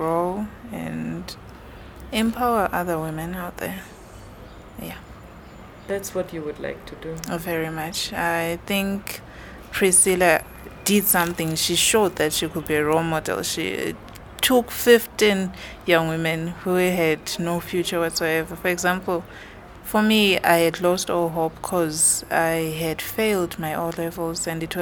Makokoba, Bulawayo, Zimbabwe - Thembele and Juliette, two young filmmakers talk their dreams….
We made this recording in a sculptor’s studio at the far end of NGZ’s big courtyard, sitting between large metal bits of sculptures… Thembele Thlajayo and Juliette Makara are two young filmmakers trained at Ibhayisikopo Film Academy by Priscilla Sithole. Here they talk about their new perspectives on life after the training and the dreams they are pursuing now as filmmakers…
The full interview with Thembele and Juliette is archived here: